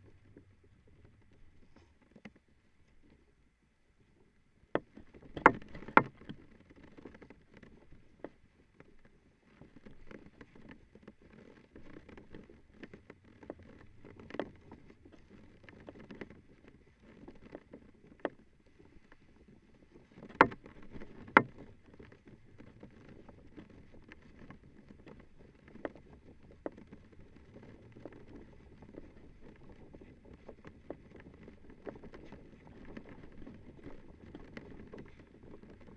Dual contact microphone recording of a small flag-pole near an entrance to a gas station store. The wind is turning the flag sideways, rotating a plastic pole in it's socket. Recorded using ZOOM H5.